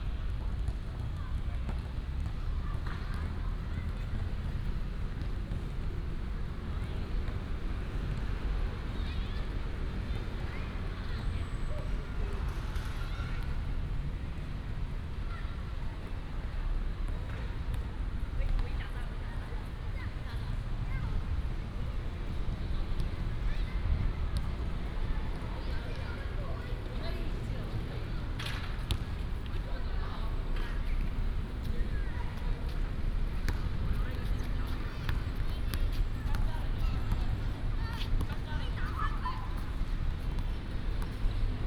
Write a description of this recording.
Walking the primary school playground, Traffic sound